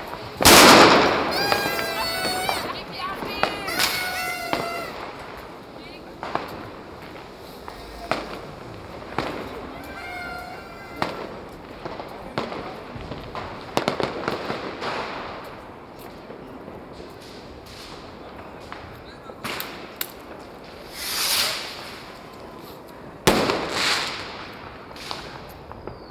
River Spree, Kreuzberg, Berlin, Germany - NYE / Sylvester Celebrations Jan 1st 2017
Tens of thousands of people on the banks of the river Spree letting off fireworks to celebrate New Years Eve / Day. VERY loud / intense - a lot of fireworks!
Binaural recording direct to a Zoom HN4.
Some level adjustment and EQ made in Logic Studio.
1 January 2017